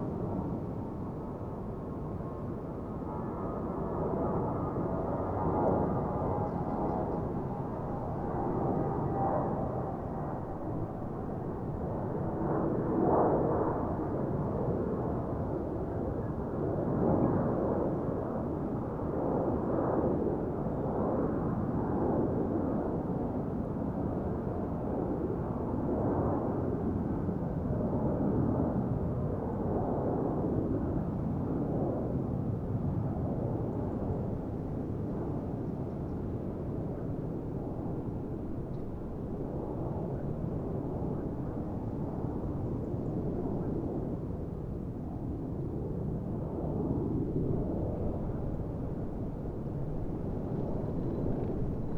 Gavà Mar
Airplanes rising over the sea waves on a nice windy day
Gavà, Spain